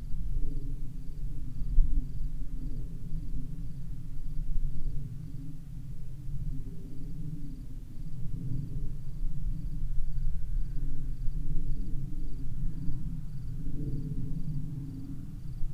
in the night, a little wind, a distant cricket, a church bell, silence
soundmap d - social ambiences and topographic field recordings